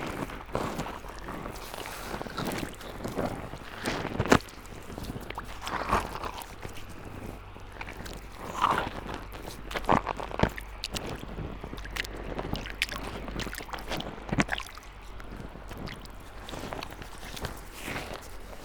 river Drava, Dvorjane - feet playing water, stones, sand, mud, microphones